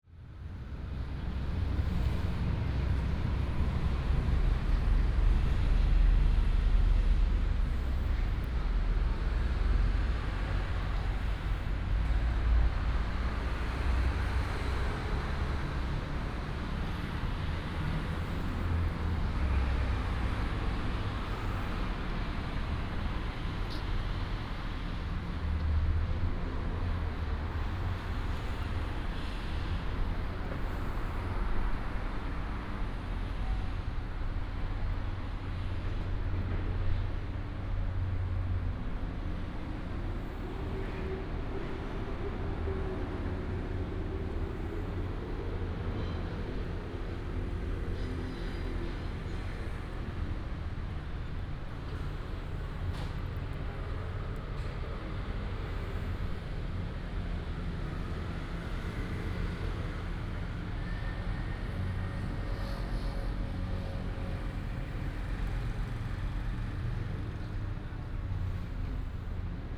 頭重里, Zhudong Township - In the square
In the square of the station, Construction sound, Traffic sound
Zhudong Township, Hsinchu County, Taiwan